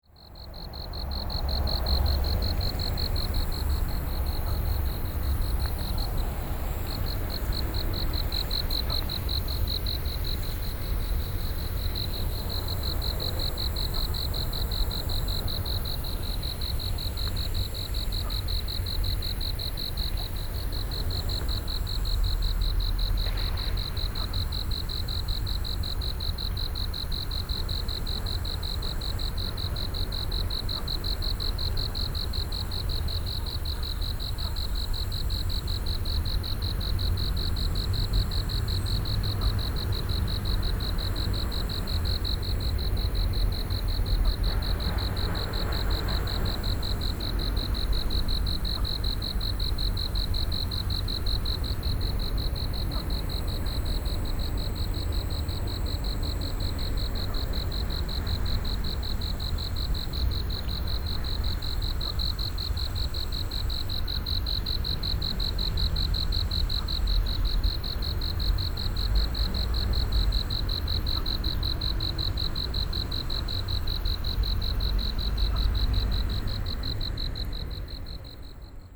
汐止公園, Xizhi Dist., New Taipei City - in the Park
Insects sounds, Frog calls, Traffic Sound
Sony PCM D50 + Soundman OKM II
New Taipei City, Taiwan, 29 June 2012